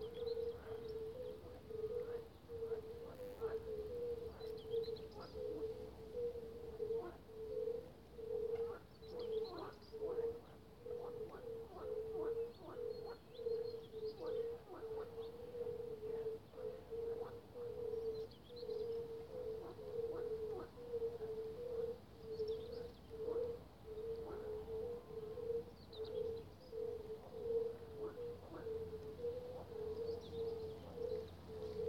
Садовая ул., корпус, Совхоза Сафоновский, Московская обл., Россия - swamp pond
You can hear birds singing, frogs croaking and the sounds of other living creatures in the swamp pond.
Центральный федеральный округ, Россия, 30 May 2020